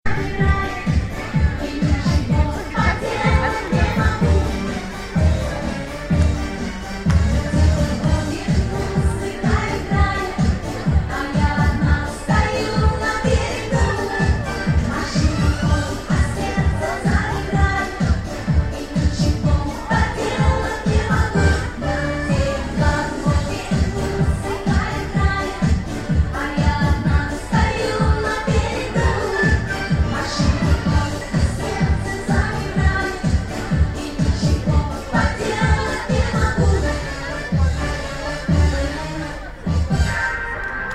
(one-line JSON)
{"title": "Russland, Saratov M.S. Nevskij legt ab", "latitude": "51.53", "longitude": "46.04", "altitude": "68", "timezone": "Europe/Berlin"}